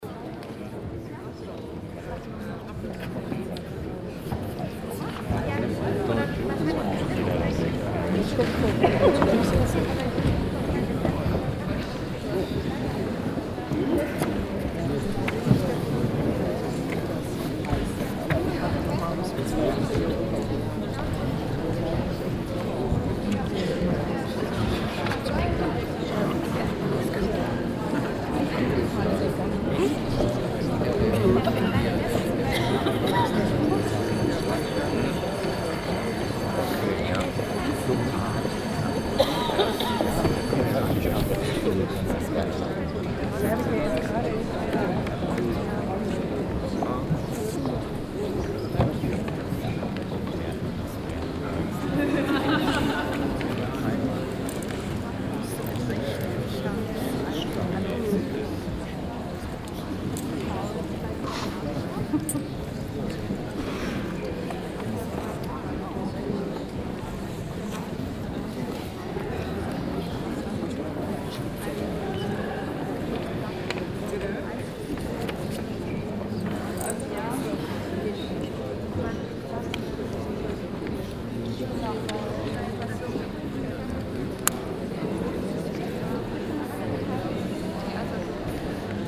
Düsseldorf, theatre, small house - düsseldorf, theatre, small house
Inside the small house of the Düseldorf Schauspielhaus theatre. The sound of the audience waiting for the play to begin. At the end the sound of the third theatre gong and the closing of the doors.
soundmap nrw - social ambiences and topographic field recordings